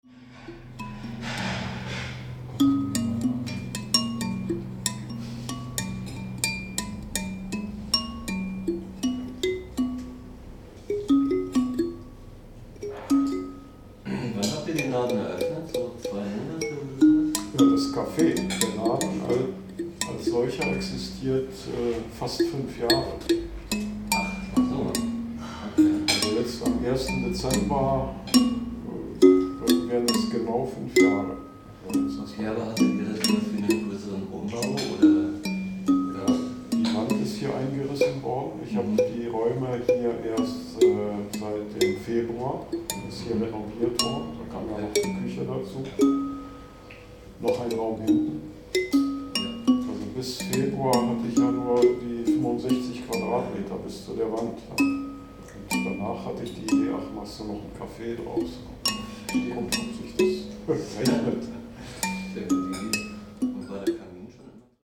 bonifazius, bürknerstr. - kalimba
bonifazius, trödel u. antikes, cafe. klanginventur im laden vorgefundener gegenstände und instrumente. wird fortgesetzt / rummage store, sound inventory, to be continued
17.11.2008 15:00 kalimba mit kürbis, anschliessend gekauft. andrzej (inhaber) spricht mit gästen / kalimba with pumpkin, owner talks to his guests
Berlin, Deutschland, 17 November 2008, 15:00